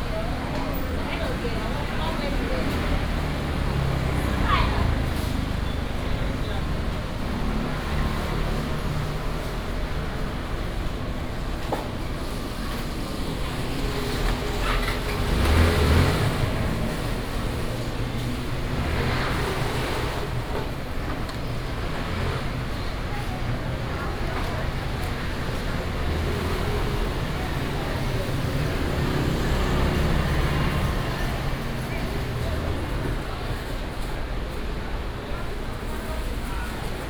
walking in the Vegetables and fruit wholesale market, traffic sound, Binaural recordings, Sony PCM D100+ Soundman OKM II